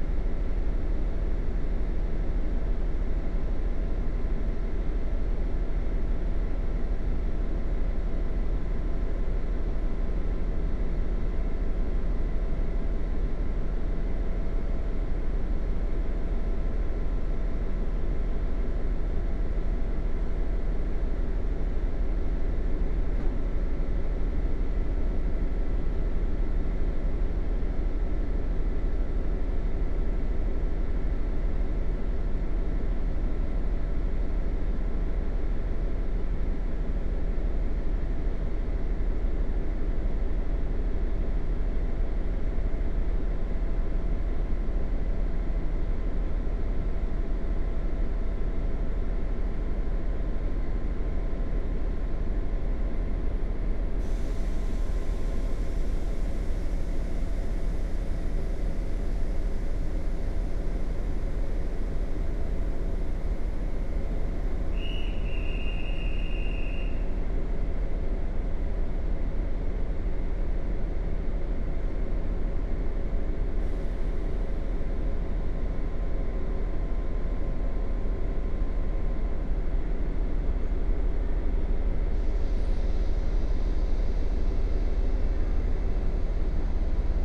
Staničné námestie, Košice-Staré Mesto, Slovakia - Trains at Košice Station
Train to Bratislava headed by diesel locomotive is leaving the station. Electric locomotive idling at the same platform. Short communication of station dispatcher and station announcement.
Východné Slovensko, Slovensko, 15 September